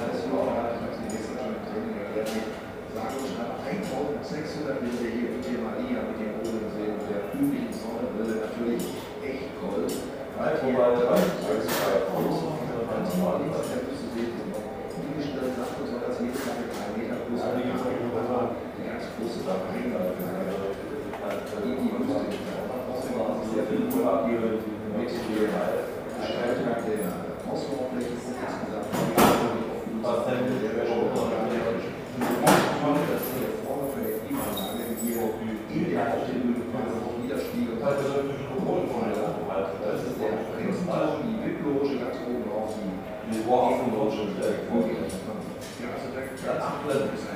dresden airport, gambling joint
dresden airport, gambling & internet joint.
recorded apr 26th, 2009.
Dresden, Germany